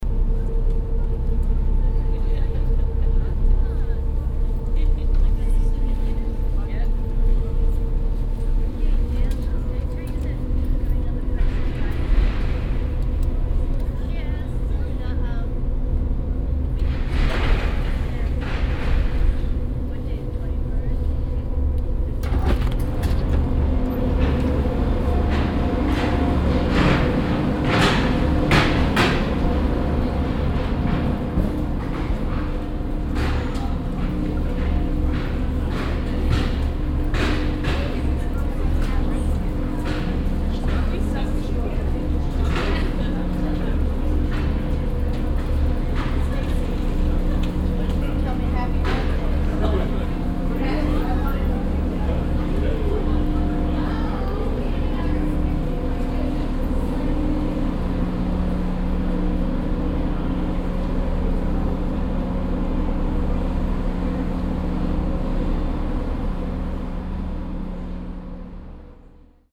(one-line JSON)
{"title": "vancouver, north harbour, sea bus landing", "description": "sound of the boat motor, slowing down, opening of the doors and people exit thesea bus cabin\nsoundmap international\nsocial ambiences/ listen to the people - in & outdoor nearfield recordings", "latitude": "49.31", "longitude": "-123.08", "timezone": "GMT+1"}